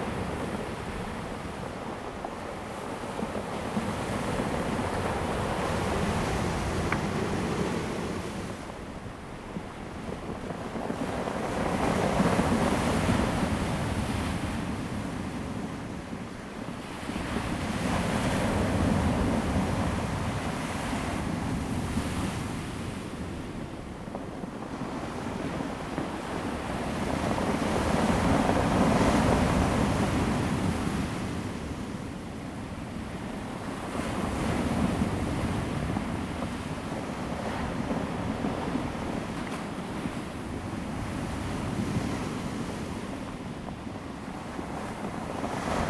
Daren Township, Taitung County - Sound of the waves
In the circular stone shore, The weather is very hot
Zoom H2n MS +XY
Daren Township, Taitung County, Taiwan, September 2014